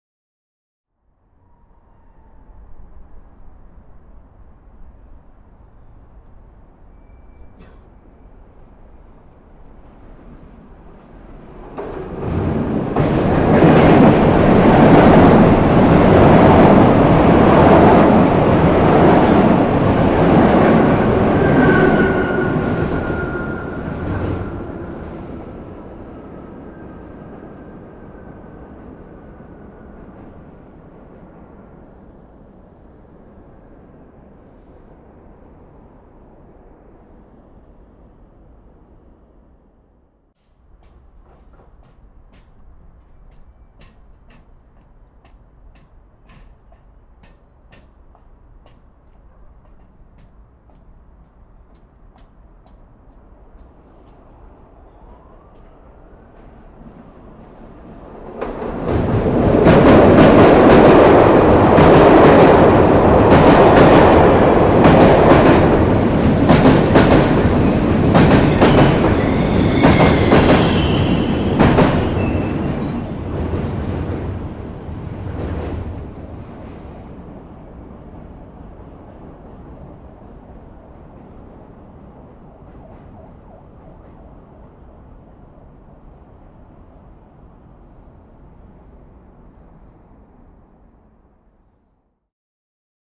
Railway Bridge, the train passing
recording made by Peter Cusack.
Prague, Czech Republic